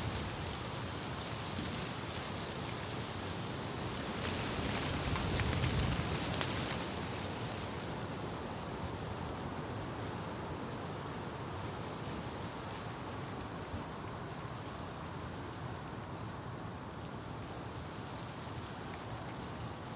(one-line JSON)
{"title": "Immerath, Erkelenz, Germany - Leaves blowing beside Immerath church", "date": "2012-11-01 13:47:00", "description": "Immerath is a condemned village. In around 5 years the massive Garzweiler mine will swallow this land and the motorway nearby. People are already negotiating compensation with the company concerned (RWE AG) and moving out. 4 centuries of its history will disappear utterly to exist only in memory.", "latitude": "51.05", "longitude": "6.44", "altitude": "96", "timezone": "Europe/Berlin"}